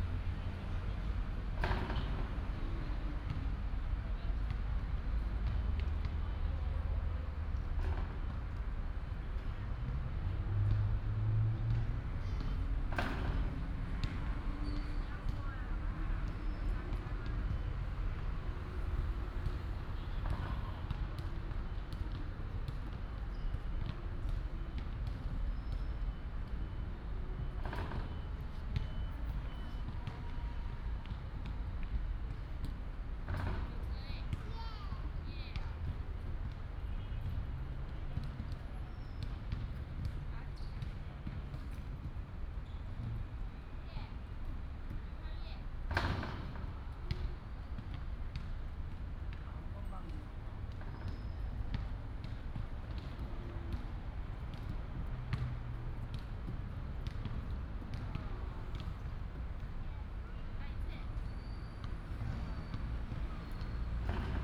空軍一村, Hsinchu City - small Park
in the small Park, Childrens play area, small basketball court, Binaural recordings, Sony PCM D100+ Soundman OKM II